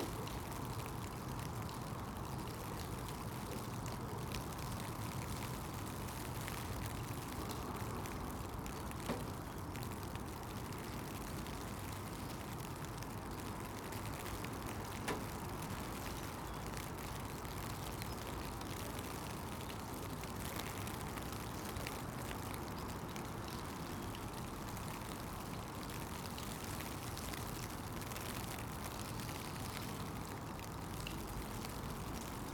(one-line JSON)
{"title": "Contención Island Day 23 inner north - Walking to the sounds of Contención Island Day 23 Wednesday January 27th", "date": "2021-01-27 08:05:00", "description": "The Poplars High Street Hawthorn Road Back High Street West Avenue Ivy Road\nAt the back of a car park\nbehind a church\none car\nUnseen\na dunnock sings from undergrowth\nWoodpigeons display on the rooftops\nthe male’s deep bow and tail lift\nPeople walk along the street\nlooking ahead most don't see me\none man does he gives me a thumbs up", "latitude": "55.01", "longitude": "-1.62", "altitude": "66", "timezone": "Europe/London"}